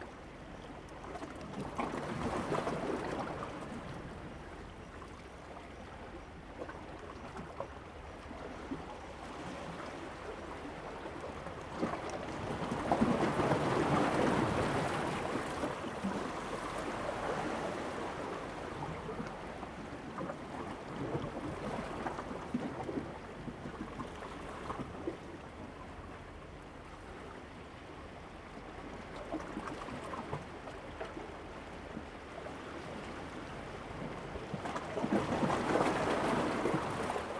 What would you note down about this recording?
sound of the waves, Zoom H2n MS+XY